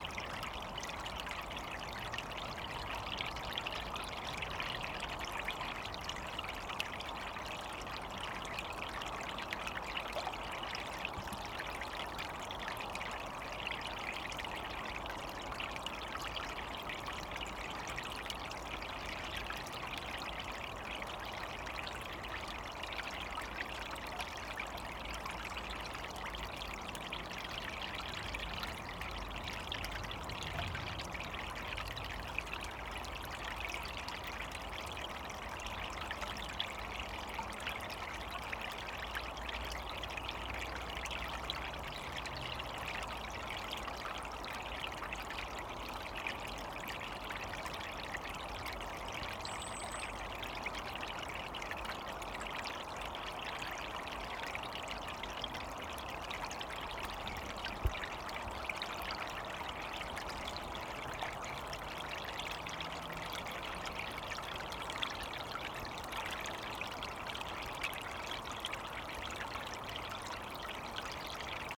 Esher, UK - Black Pond
Recording using Zoom 5, Rode NTG2 - microphone facing the ground